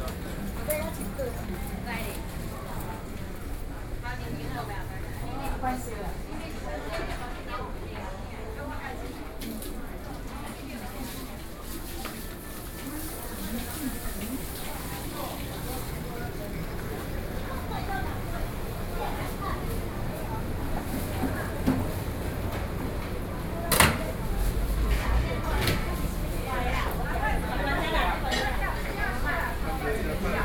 New Taipei City, Taiwan - SoundWalk